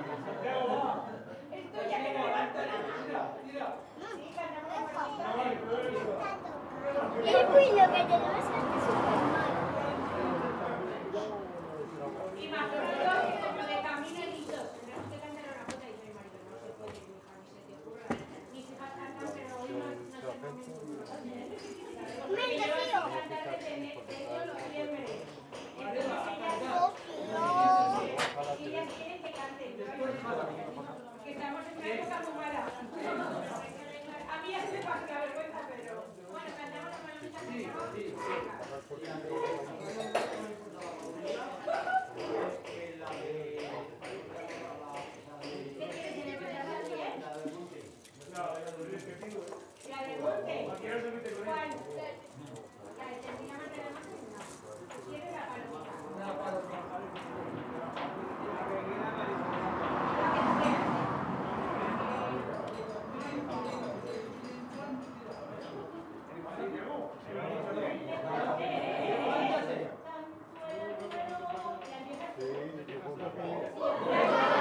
Calle Carretera, Villarquemado, Teruel, Spain - Jota in Villarquemado
2 Jotas, regional traditional songs, sang at a celebration in a restaurant. From outside in the distance is someone hammering, and nearby are sounds from people talking outside, children playing, and the road.
Recorded on a Zoom H2n internal mics.